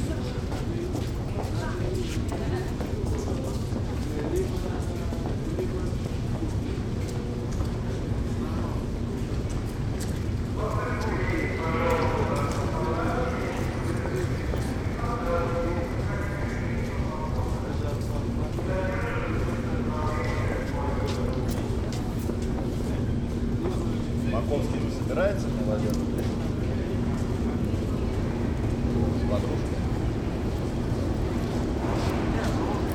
St. Petersburg, Russia - metro station Nevsky prospect
I was sitting at the station, and waited for the meeting.
I'm a little late, and the meeting could not be, so I decided to entertain myself this record on the recorder Zoom H2.
Sankt-Peterburg, Russia